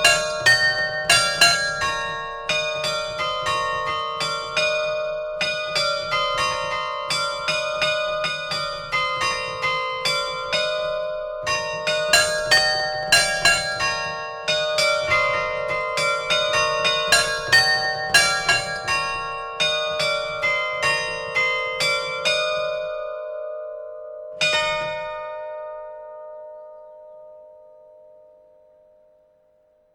Rue Antoine Morelle, Bouchain, France - Bouchain - Carillon de l'église
Bouchain (Nord)
Carillon de l'église St-Quentin
Ritournelles automatisées + 10h.
France métropolitaine, France, 24 June 2020